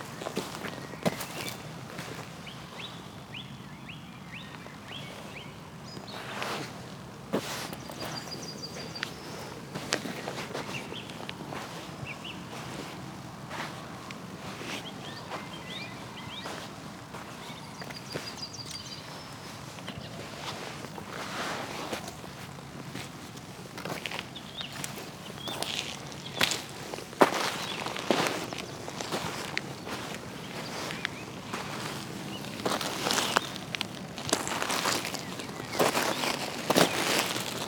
drava, riverbed, dvorjane - walk on sand, power line